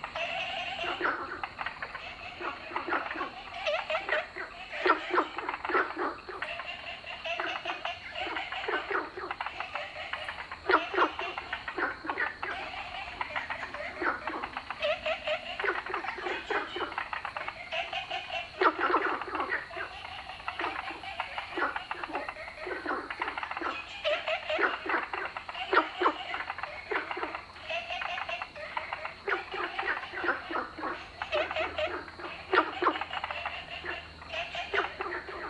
In the park, Frog sound, Ecological pool
Zoom H2n MS+XY